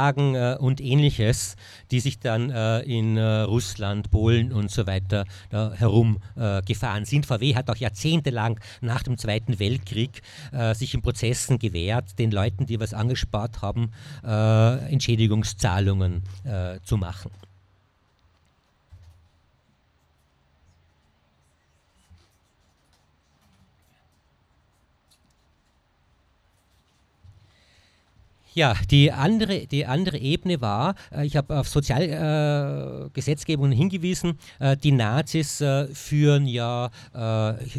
Innstraße, Innsbruck, Österreich - Speakers Corner: Historian Horst Schreiber in the Park
Innsbruck, Austria, 7 June 2018, ~19:00